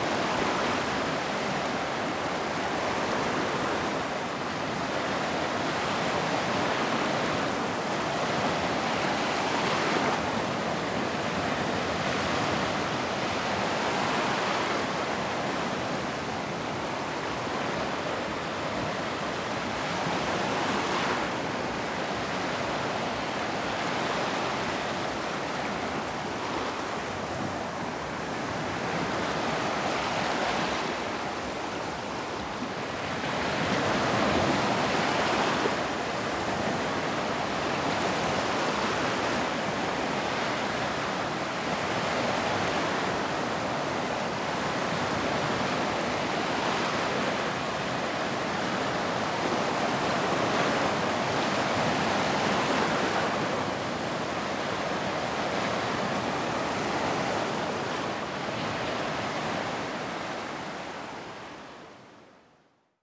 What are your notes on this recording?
It was a fairly windy afternoon. This recording was made with Sony PCM-D100 handheld placed on a Rycote suspension. On top of a standard Sony windshield, I have placed Rycote BBG Windjammer.